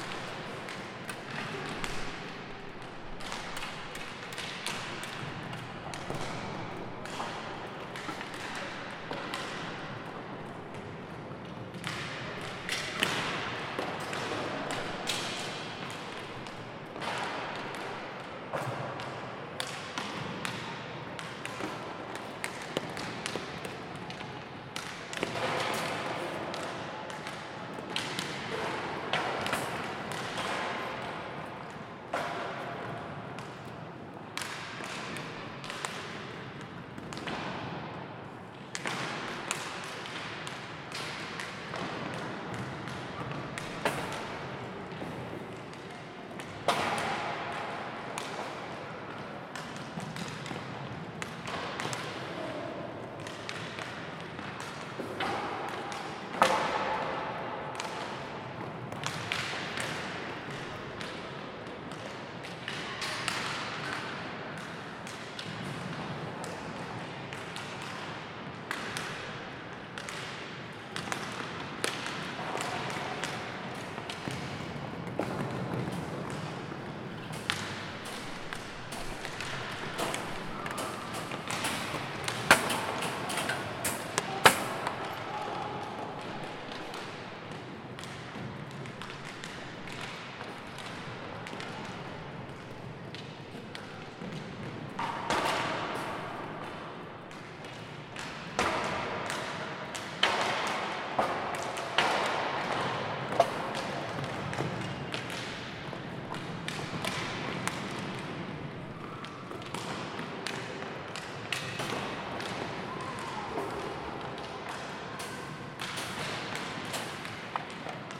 Av. Santos Costa, Pedroso, Portugal - hockey warm-up
stereo recording using an H4 zoom recorder, made inside a hockey rink, during the warm-up period.